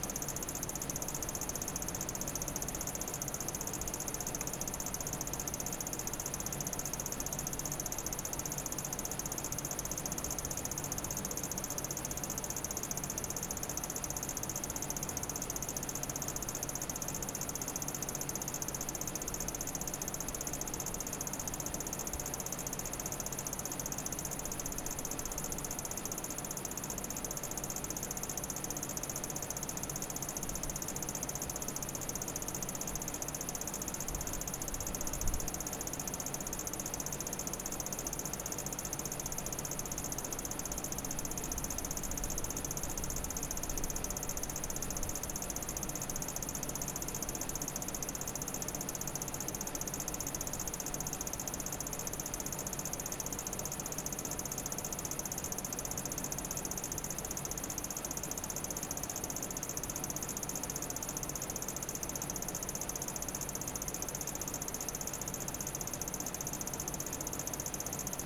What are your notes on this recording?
an air conditioning unit makes a sharp rattle which spreads around among the apartment buildings and can be heard from long distances. there are dozens of such units in the area. their noise competing with crickets, pouring over the silence of summer night.